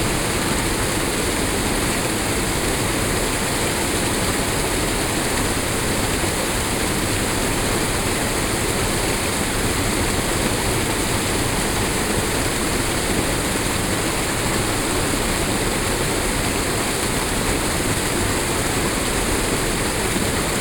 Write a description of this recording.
France, Auvergne, WWTP, waterfall, night, insects, binaural